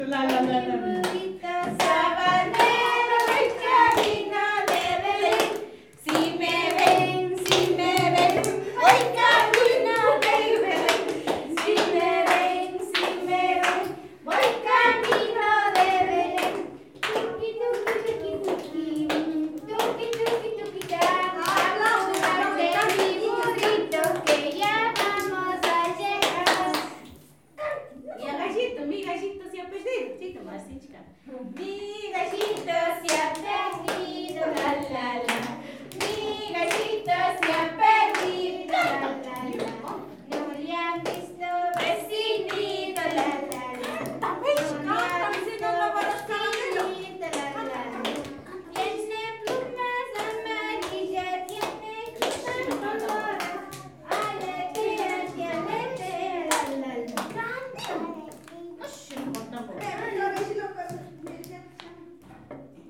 Chimborazo, Équateur - A la escuela
Children sing in a nursery school campaign.